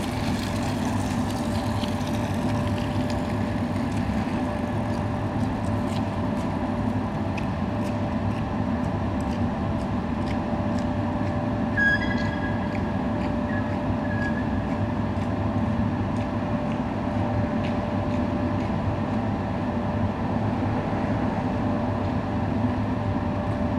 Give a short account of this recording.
Ackerstraße - TU Berlin quadrangle ambience. Former AEG building. [I used an MD recorder with binaural microphones Soundman OKM II AVPOP A3]